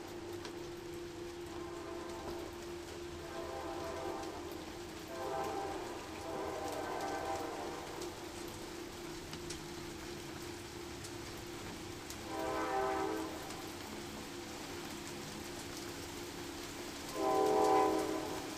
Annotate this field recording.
sound of ice hail, passing train and storm, all of that while listening Crawl Unit / Drone 2